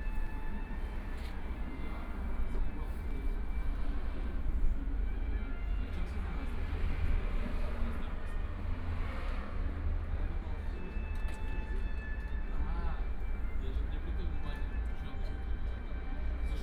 Odeonplatz, Munich - Sitting in front of the coffee shop
Sitting in front of the coffee shop, Footsteps, Traffic Sound, Birdsong
Munich, Germany, 11 May 2014